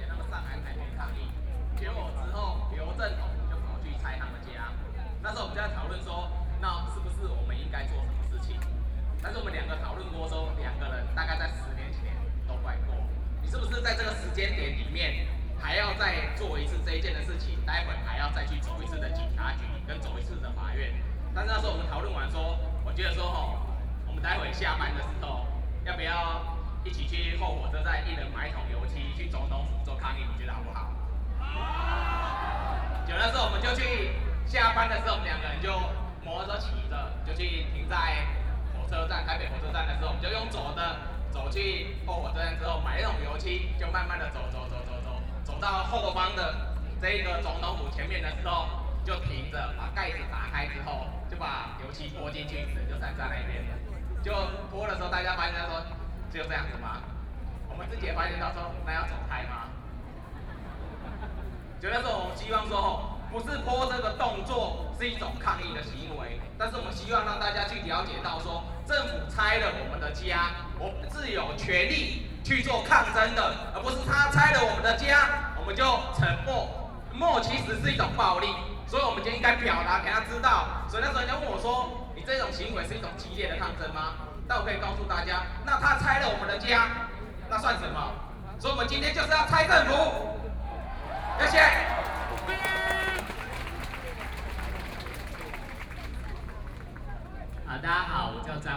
Ketagalan Boulevard, Taipei, Taiwan - Protest
Protest, Sony PCM D50 + Soundman OKM II